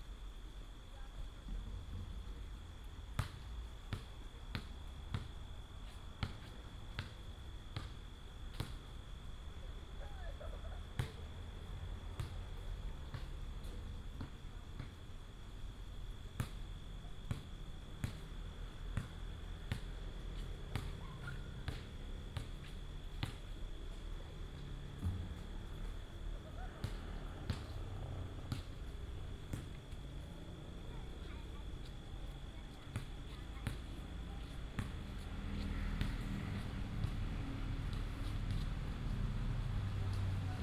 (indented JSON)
{
  "title": "Shigang Dist., Taichung City - next to the Park",
  "date": "2017-11-01 19:45:00",
  "description": "Abandoned railway, Currently converted into bike lanes and parks, traffic sound, Childrens sound, Basketball court, Buzz sound, Binaural recordings, Sony PCM D100+ Soundman OKM II",
  "latitude": "24.28",
  "longitude": "120.78",
  "altitude": "292",
  "timezone": "Asia/Taipei"
}